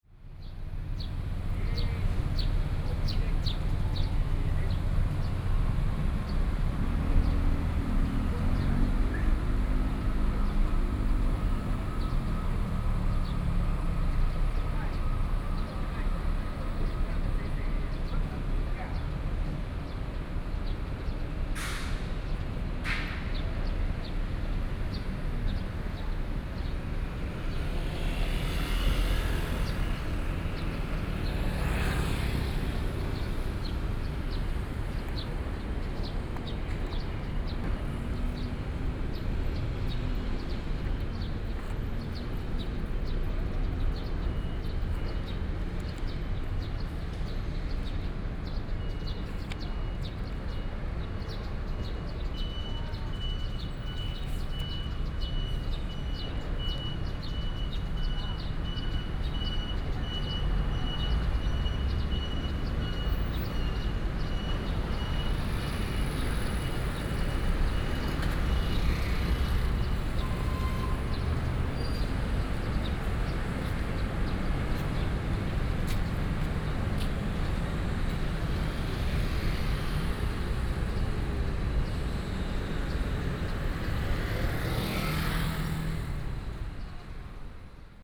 Plaza in front of the station, Traffic Sound, Birds singing
Kaohsiung City, Taiwan